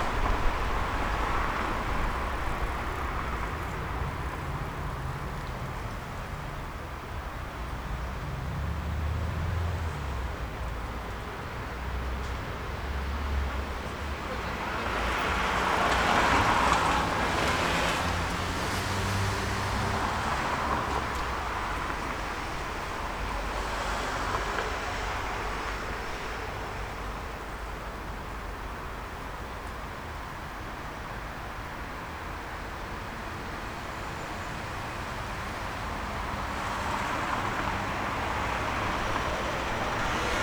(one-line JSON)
{"title": "berlin wall of sound-axel springer building. j.dickens 140909", "latitude": "52.51", "longitude": "13.40", "altitude": "35", "timezone": "Europe/Berlin"}